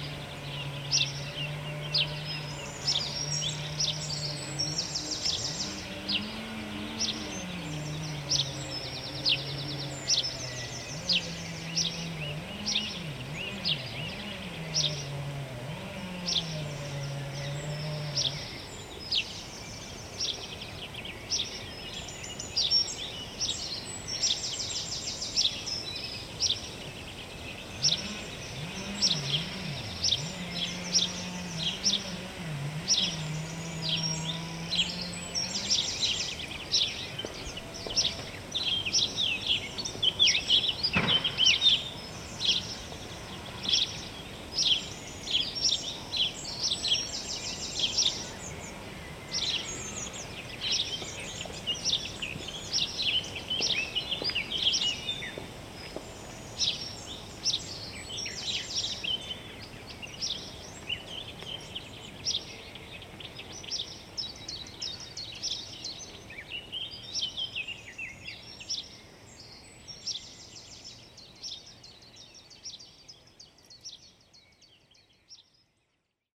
Chemin des Ronferons, Merville-Franceville-Plage, France - Birds and a pony
Birds and a pony during the Covid-19 pandemic, Zoom H3VR, Binaural